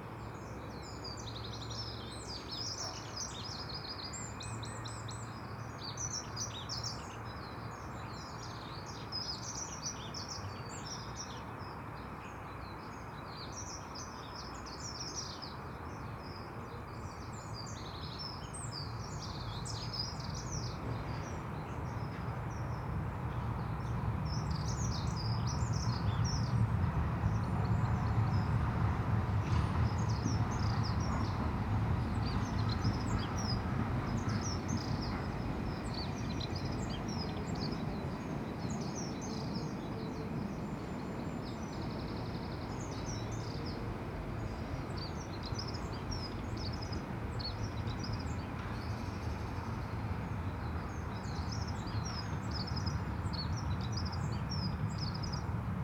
{"title": "Contención Island Day 78 outer north - Walking to the sounds of Contención Island Day 78 Tuesday March 23rd", "date": "2021-03-23 09:15:00", "description": "The Poplars Roseworth Crescent The Drive Church Road\nAlarm train siren car plane saw\nI feel pressed\nto the back of the churchyard\nTumbled headstones\ngraves grown with inadvertent pollards\nair of half-managed neglect\nBlackbird drops from bush to grass\ncrow takes a beak of straw\ndunnock sings", "latitude": "55.01", "longitude": "-1.61", "altitude": "56", "timezone": "Europe/London"}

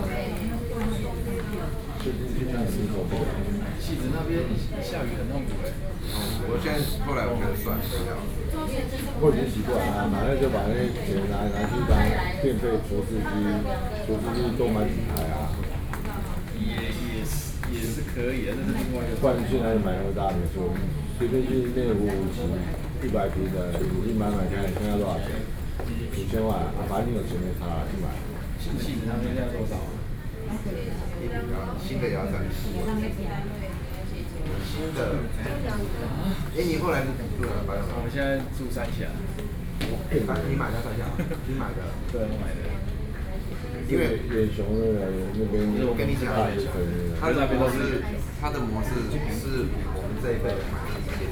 {"title": "台大醫院, 中正區, Taipei City - in the hospital", "date": "2012-11-29 12:50:00", "description": "In the hospital, Outside the operating room, Waiting and conversation, (Sound and Taiwan -Taiwan SoundMap project/SoundMap20121129-5), Binaural recordings, Sony PCM D50 + Soundman OKM II", "latitude": "25.04", "longitude": "121.52", "altitude": "13", "timezone": "Asia/Taipei"}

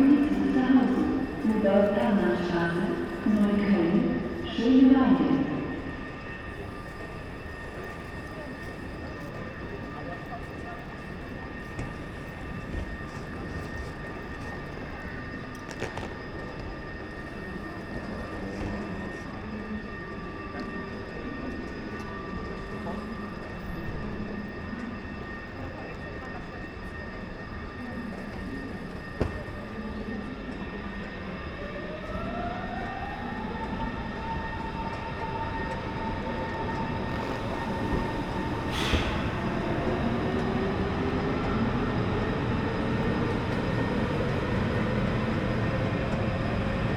{"title": "Berlin Südkreuz, Berlin, Deutschland - escalator, station ambience", "date": "2019-04-20 18:35:00", "description": "station ambience and done from two escalators at Berlin Südkreuz\n(Sony PCM D50, Primo EM172)", "latitude": "52.48", "longitude": "13.37", "altitude": "47", "timezone": "Europe/Berlin"}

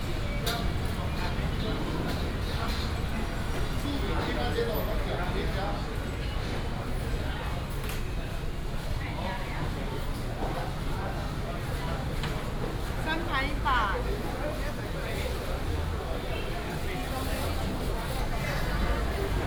{"title": "北平路黃昏市場, North Dist., Taichung City - dusk market", "date": "2017-11-01 18:26:00", "description": "Walking in the dusk market, traffic sound, vendors peddling, Traditional Taiwanese Markets, Binaural recordings, Sony PCM D100+ Soundman OKM II", "latitude": "24.17", "longitude": "120.68", "altitude": "123", "timezone": "Asia/Taipei"}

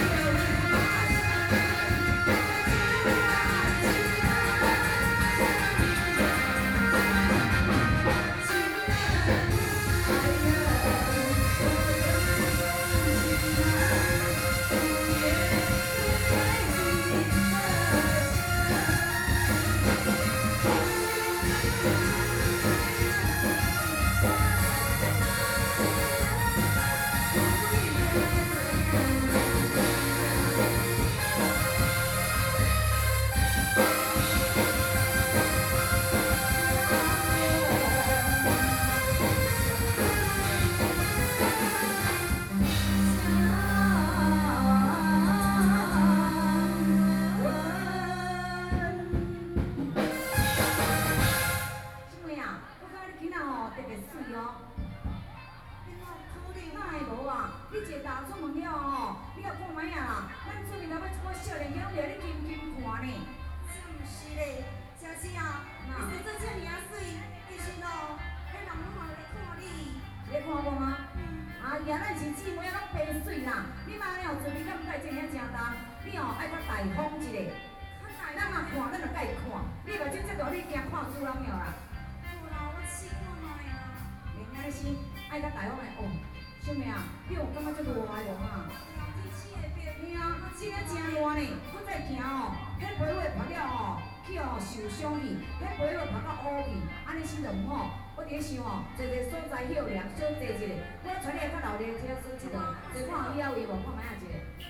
{"title": "Taoyuan Village, Beitou - Taiwanese opera", "date": "2013-07-21 20:30:00", "description": "Taiwanese Opera, Zoom H4n + Soundman OKM II", "latitude": "25.14", "longitude": "121.49", "altitude": "19", "timezone": "Asia/Taipei"}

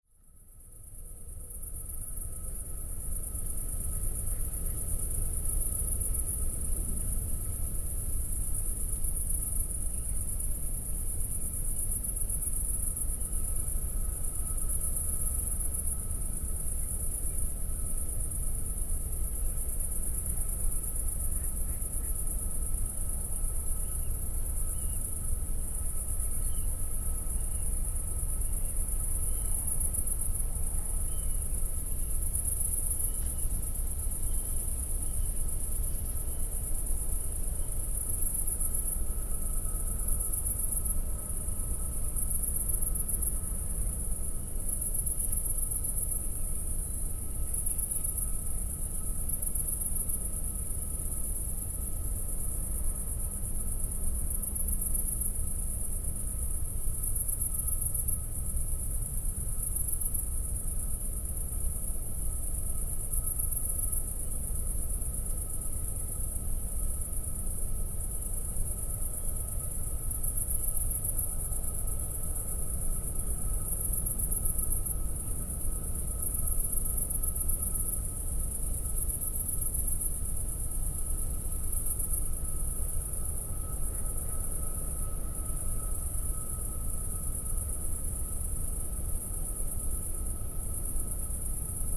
{"title": "Gonfreville-l'Orcher, France - Criquets during the night", "date": "2016-07-20 23:45:00", "description": "We are staying here for the night. Crickets are active and singing. At the backyard, the refinery is audible.", "latitude": "49.45", "longitude": "0.22", "altitude": "4", "timezone": "Europe/Paris"}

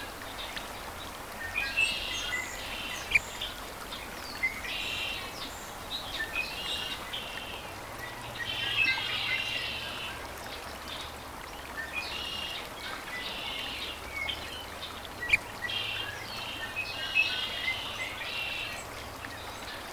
{"title": "Lone Pine, CA, USA - Birds at Sunrise on Owen's River", "date": "2022-08-26 06:10:00", "description": "Metabolic Studio Sonic Division Archives:\nDawn chorus of birds on Owen's River during sunrise. One mic placed near a tree and another mic placed near the surface of the river", "latitude": "36.62", "longitude": "-118.04", "altitude": "1106", "timezone": "America/Los_Angeles"}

